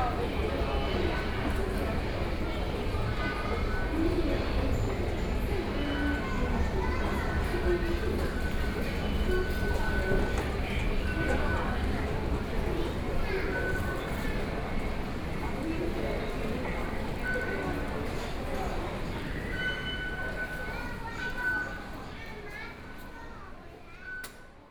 {"title": "Banqiao Station, Banqiao District - Soundwalk", "date": "2013-10-12 15:25:00", "description": "From the square through the underground shopping street and the station hall, Went to MRT station, Binaural recordings, Sony PCM D50+ Soundman OKM II", "latitude": "25.01", "longitude": "121.46", "altitude": "6", "timezone": "Asia/Taipei"}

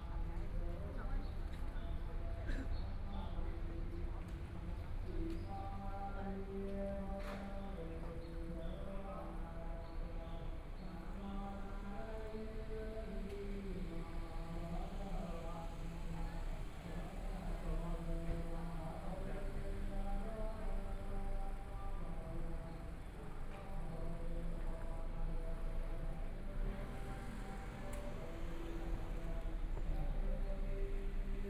{"title": "臨濟護國禪寺, Taipei City - Walking in the temple", "date": "2014-02-08 16:03:00", "description": "Walking in the temple, Chanting voices, Aircraft flying through, Birds singing, Binaural recordings, Zoom H4n+ Soundman OKM II", "latitude": "25.07", "longitude": "121.52", "timezone": "Asia/Taipei"}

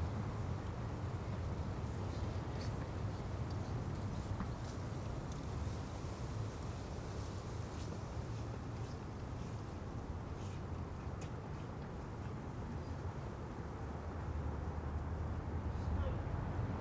{"title": "Contención Island Day 35 inner west - Walking to the sounds of Contención Island Day 35 Monday February 8th", "date": "2021-02-08 09:21:00", "description": "The Poplars\nEast wind\nblowing snow\nwhat is traffic noise\nwhat is the wind\nA tracery of dead ivy\nlaces the tree trunks\nThe wall pillar\nleans out at an angle", "latitude": "55.00", "longitude": "-1.62", "altitude": "70", "timezone": "Europe/London"}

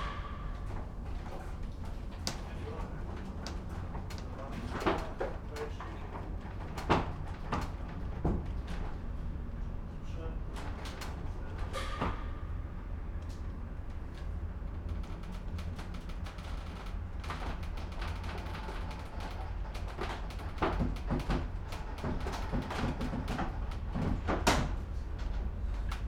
{"title": "Standseilbahn, Degerloch, Stuttgart - cable car driving up-hill", "date": "2012-12-08 16:50:00", "latitude": "48.75", "longitude": "9.14", "altitude": "325", "timezone": "Europe/Berlin"}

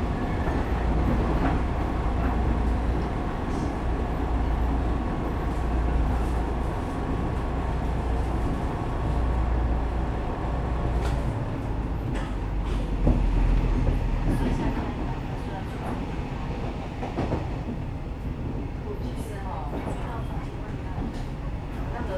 {"title": "Sanmin District, Kaohsiung - inside the Trains", "date": "2012-03-03 08:26:00", "description": "inside the Trains, Sony ECM-MS907, Sony Hi-MD MZ-RH1", "latitude": "22.64", "longitude": "120.32", "altitude": "12", "timezone": "Asia/Taipei"}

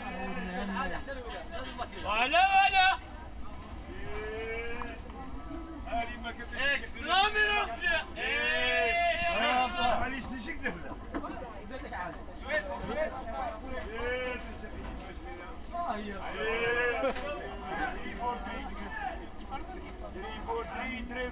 {"title": "Saint-Gilles, Belgium - Brussels local market", "date": "2018-05-13 10:00:00", "latitude": "50.84", "longitude": "4.34", "altitude": "21", "timezone": "Europe/Brussels"}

